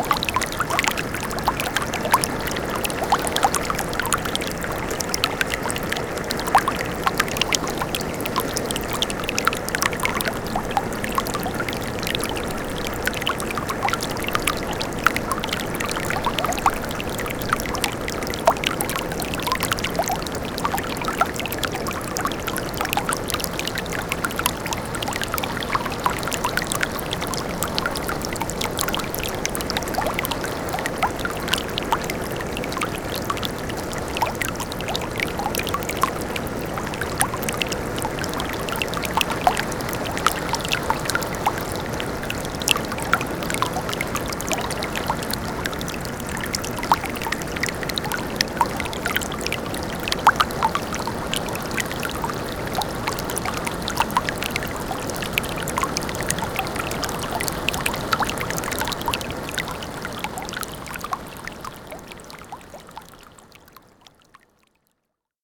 Rice University, Main St, Houston, TX, USA - that laughter/broken water main
Water burbling up from a broken water main and flowing out of a crack in pavement. Background sound of a automatic sprinkler aimed into a garden enclosed by heavy cloth sunscreens.
Sony PCM D50